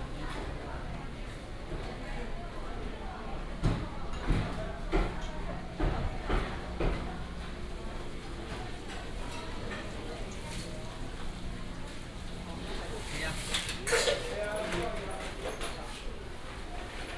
沙鹿公有零售市場, Shalu Dist., Taichung City - the indoor markets
walking in the indoor markets